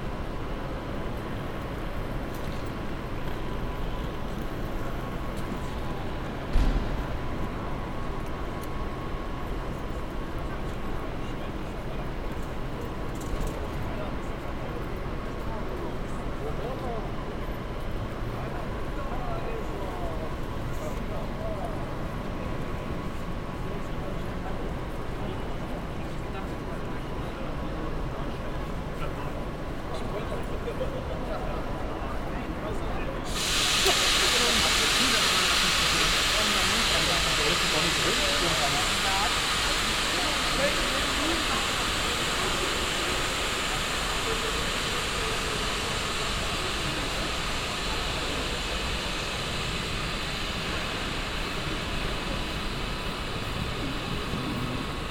dresden, main station, main hall
announcements, steps and rolling suitcases in dresden main station
while trains drive in and out
soundmap d: social ambiences/ listen to the people - in & outdoor nearfield recordings
15 June 2009, ~10:00